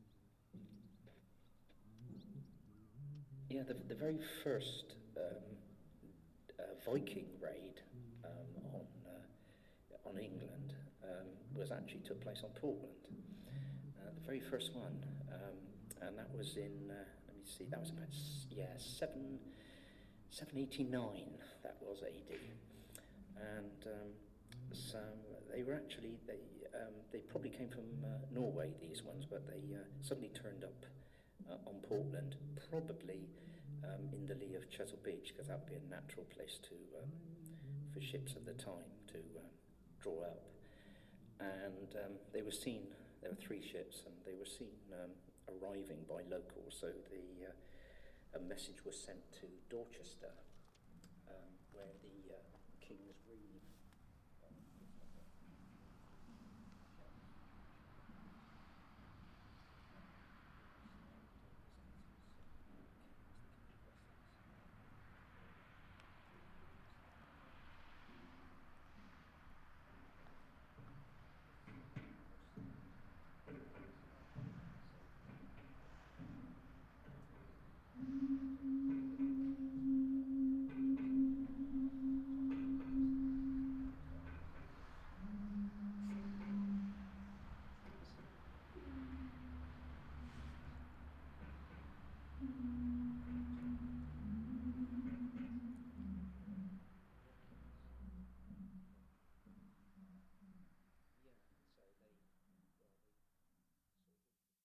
ivon oates - Portland Stone: sound installation Fishermans Row Portland Dorset UK
Sound installation commissioned work for b-side Weymouth and Portland Dorset UK